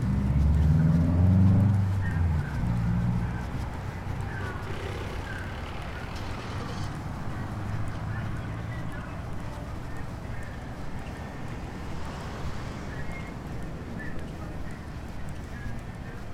{"title": "University of Colorado Boulder, Regent Drive, Boulder, CO, USA - Broadway Crossway", "date": "2013-02-14 04:43:00", "description": "Crossing the road at Broadway and i believe Penn", "latitude": "40.01", "longitude": "-105.28", "altitude": "1663", "timezone": "America/Denver"}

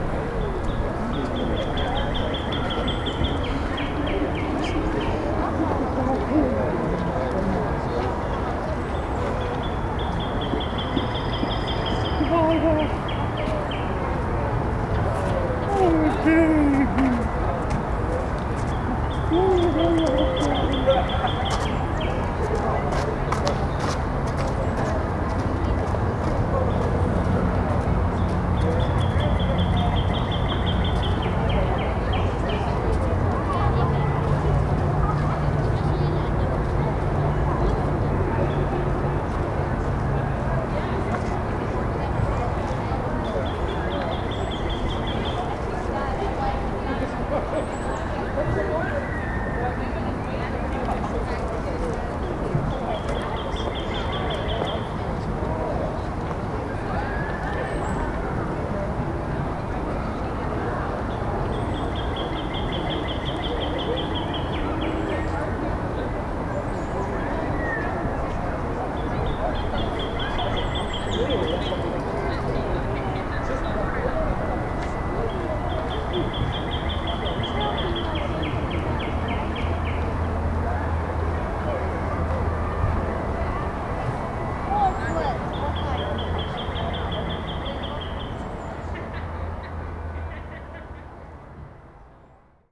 {"title": "Roswell Rd, Marietta, GA, USA - East Cobb Park Ambience", "date": "2020-02-22 15:26:00", "description": "A recording of a busy park captured from a set of benches. This was one of the nicest days we've had in weeks, so both children and adults took the opportunity to get some fresh air and enjoy the sun. There's a playground right in front of where the recorder was positioned, and you can hear people shuffling along the path to the left of the recorder. Recording taken with Tascam dr-100mkiii and dead cat.", "latitude": "33.98", "longitude": "-84.45", "altitude": "290", "timezone": "America/New_York"}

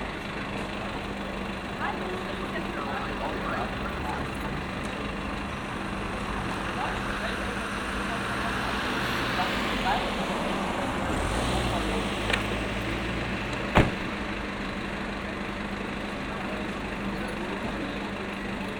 {"title": "Berlin: Vermessungspunkt Maybachufer / Bürknerstraße - Klangvermessung Kreuzkölln ::: 26.08.2012 ::: 01:55", "date": "2012-08-26 01:55:00", "latitude": "52.49", "longitude": "13.43", "altitude": "39", "timezone": "Europe/Berlin"}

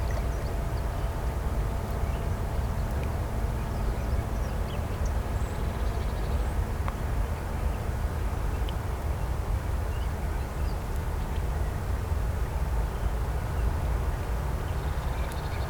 {"title": "River Warta, Srem - military practice", "date": "2018-09-17 10:28:00", "description": "at the river Warta. Noise of the city and gun shots from military practice area a few kilometers away (roland r-07)", "latitude": "52.10", "longitude": "17.01", "altitude": "59", "timezone": "Europe/Warsaw"}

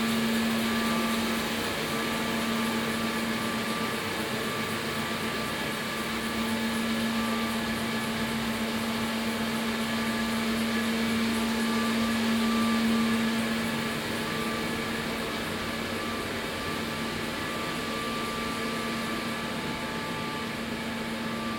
{"title": "Scierie d'en Haut, Saint-Hubert, Belgique - Turbines in the micro hydroelectric power plant", "date": "2022-05-28 12:30:00", "description": "Turbines dans la microcentrale hydroélectrique du Val de Poix.\nTech Note : SP-TFB-2 binaural microphones → Olympus LS5, listen with headphones.", "latitude": "50.02", "longitude": "5.29", "altitude": "328", "timezone": "Europe/Brussels"}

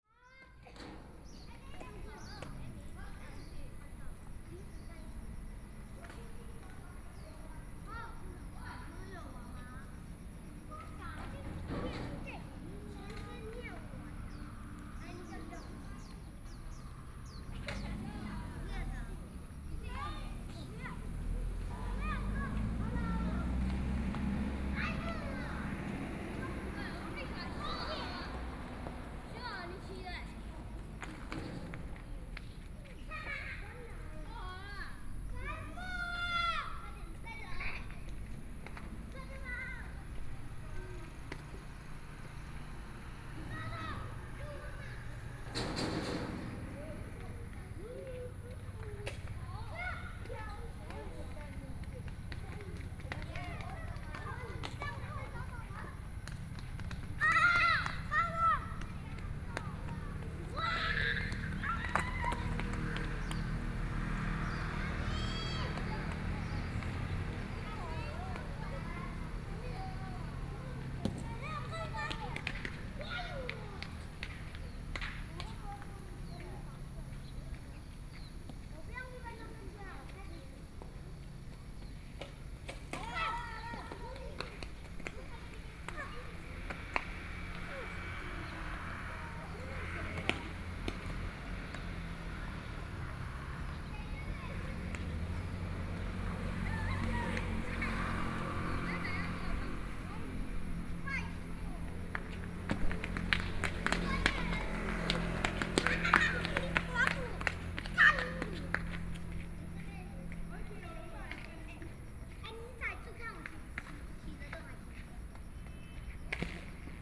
{"title": "國聖廟, 蘇澳鎮龍德里 - Child", "date": "2014-07-28 18:34:00", "description": "In the square, in front of the temple, Small village, Traffic Sound, A group of children playing games", "latitude": "24.65", "longitude": "121.83", "altitude": "10", "timezone": "Asia/Taipei"}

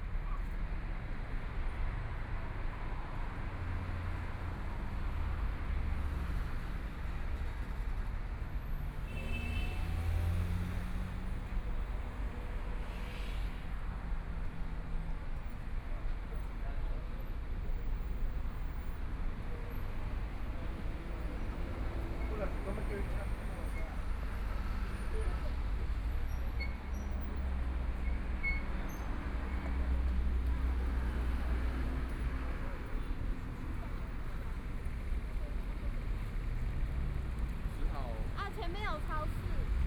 {"title": "Minquan E. Rd., Songshan Dist. - walking on the Road", "date": "2014-01-20 15:41:00", "description": "Walking on the road, Traffic Sound, Binaural recordings, Zoom H4n+ Soundman OKM II", "latitude": "25.06", "longitude": "121.54", "timezone": "Asia/Taipei"}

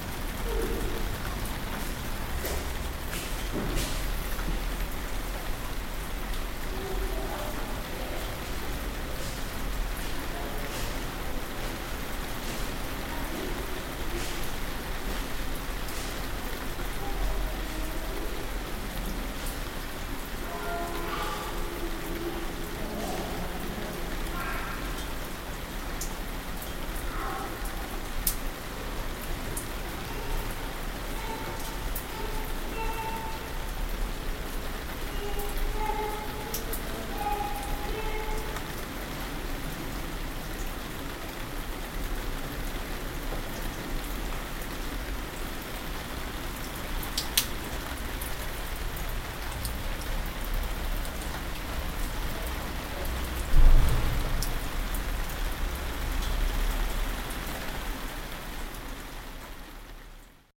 {"title": "cologne, rain in the backyard", "description": "rain on a plastic roof, child and mother nearby in the corridor. recorded june 5, 2008. - project: \"hasenbrot - a private sound diary\"", "latitude": "50.92", "longitude": "6.96", "altitude": "57", "timezone": "GMT+1"}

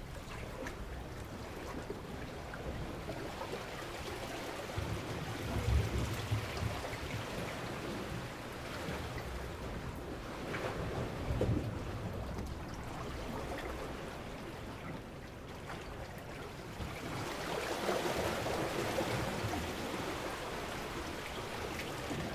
{"title": "Île Renote, Trégastel, France - Waves swirl on rocks [Ile Renote]", "date": "2019-04-22 10:09:00", "description": "Au bout de l'île. Marée montante. Des vagues et des rochers.\nAt the end of the island. Rising tide. Waves, rocks.\nApril 2019.", "latitude": "48.84", "longitude": "-3.51", "timezone": "Europe/Paris"}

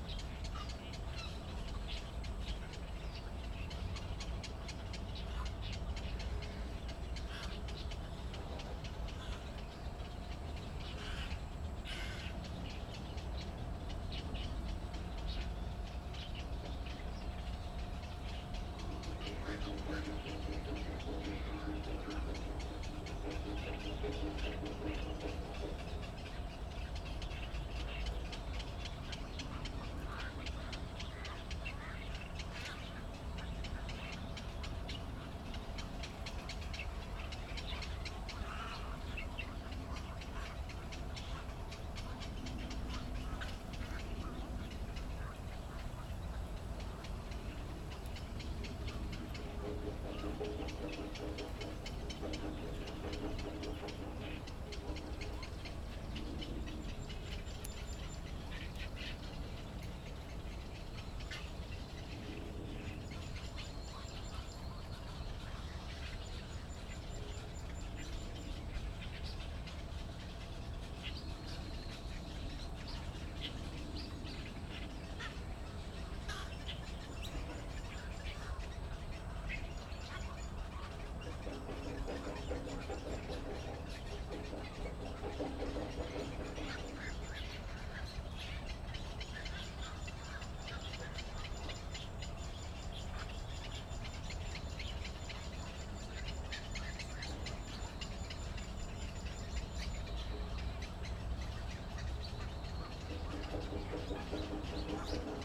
{"title": "羅東林業文化園區, Luodong Township - Birdsong", "date": "2014-07-28 09:59:00", "description": "Birdsong, in the Park\nZoom H6 MS+ Rode NT4", "latitude": "24.68", "longitude": "121.77", "altitude": "8", "timezone": "Asia/Taipei"}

